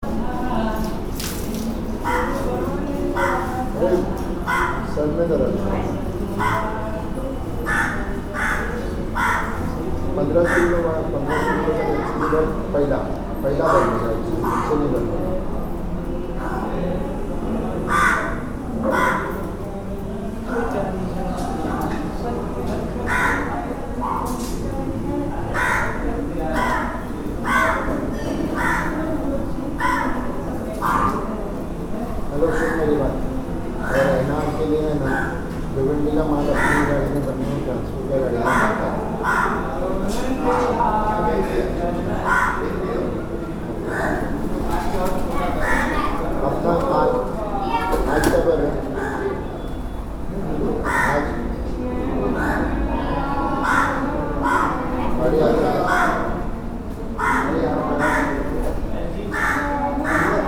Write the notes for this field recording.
Serene atmopshere in the temple of Babulnath, disturbed only by a crow.